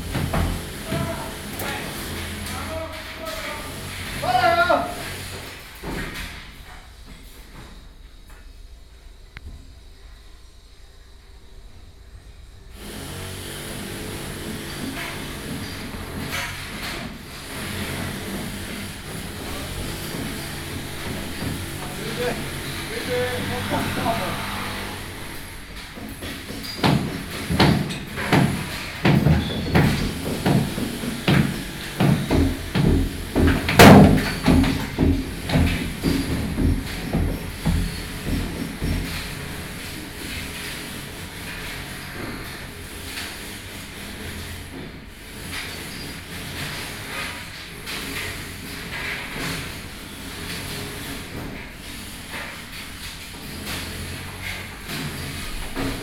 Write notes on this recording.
Being renovated house, Binaural recordings+Zoom H4n +Contact Mic.